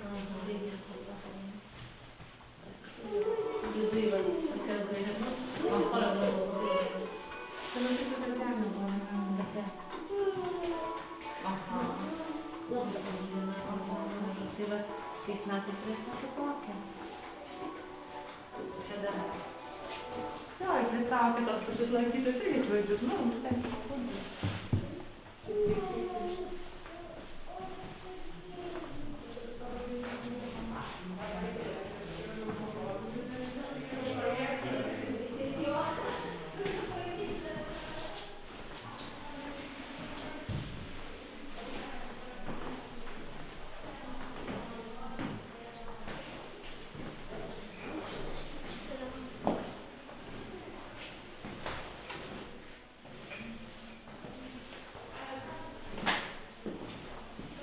Burbiskis manor, Lithuania, museum
Inside Burbiskis Manor's museum